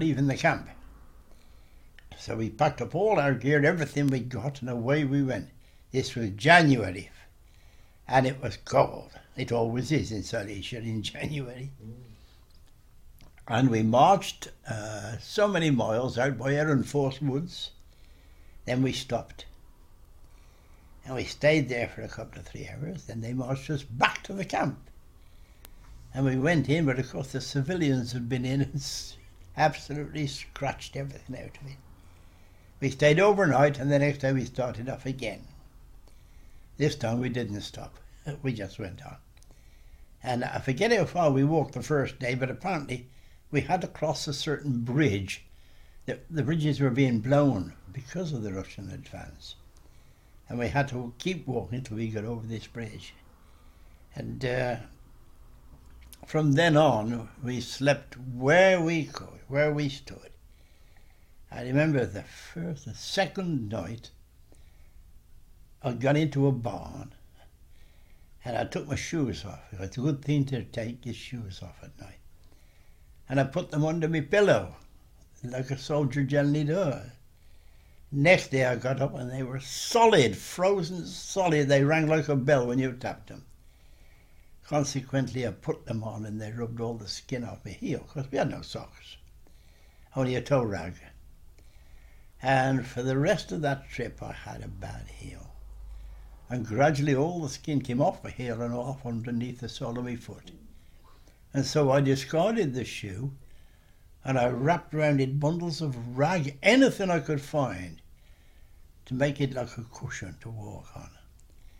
Blechhammer, Kędzierzyn-Koźle, Poland - A POW Remembers
An aural document by Harold Pitt, POW No 5585 who was captured 26th May 1940 and spent some years at this spot in Bau und Arbeits Battallion (BAB) 21, a work camp for British Prisoners of War. He was liberated by the Americans in April 1945. He died 10th April (my birthday) 2011 aged 93. He was my father.